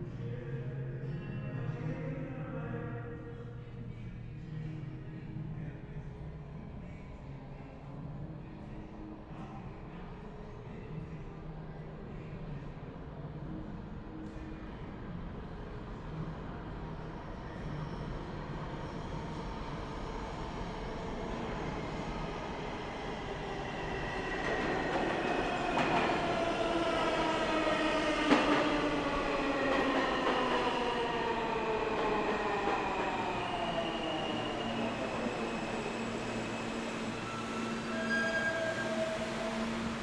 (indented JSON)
{
  "title": "Delancey St, New York, NY, USA - Fast car, fast train",
  "date": "2021-05-07 11:00:00",
  "description": "Recording made inside Delancey Street/Essex Street station.\nA man is singing the \"Fast Car\" while the F train approaches.",
  "latitude": "40.72",
  "longitude": "-73.99",
  "altitude": "10",
  "timezone": "America/New_York"
}